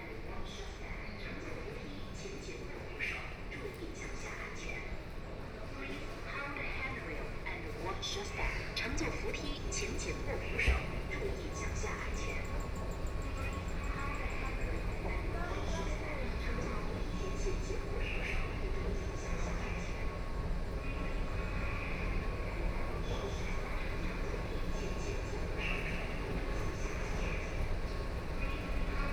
Siping Road Station, Shanghai - walking in the station
walking in the station, Siping Road station, To exit from the station platform to the upper, Binaural recording, Zoom H6+ Soundman OKM II